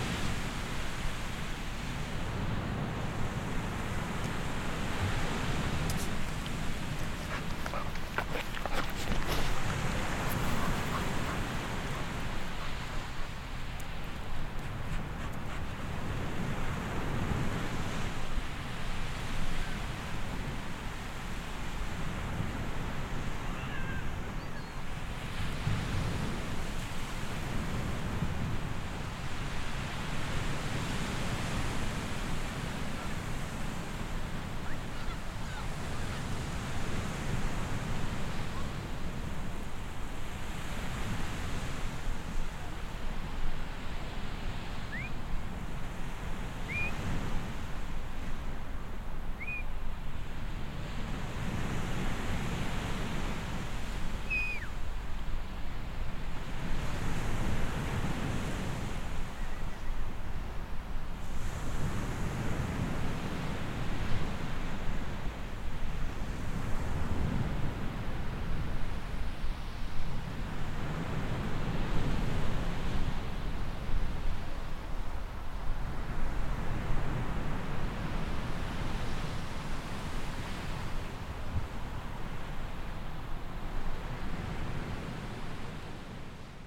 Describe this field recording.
ambience of the beach in winter